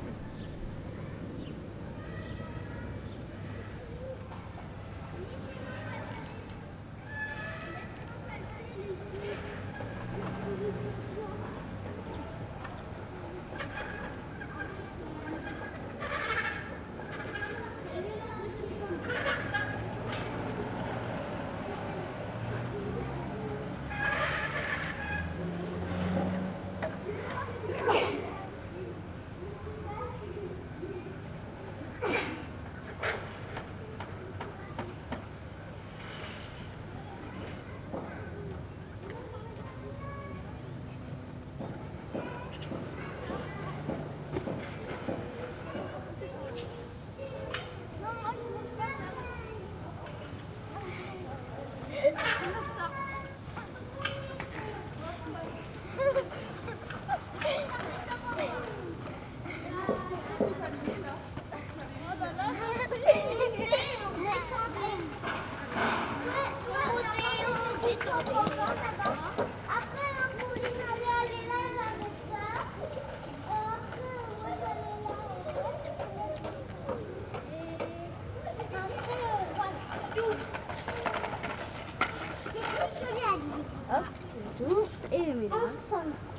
Lyon, Place Schonberg, Children playing on a place in front of the Library.
Lyon, France